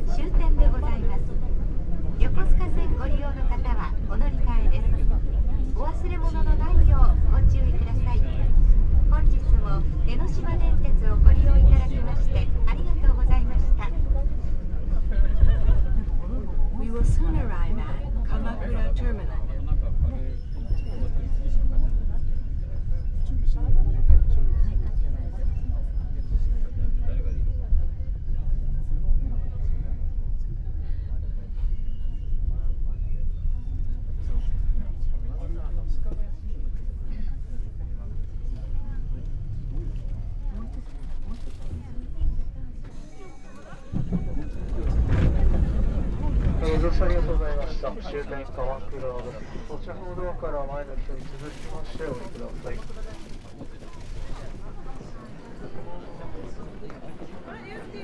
electric tram, kamakura, japan - ride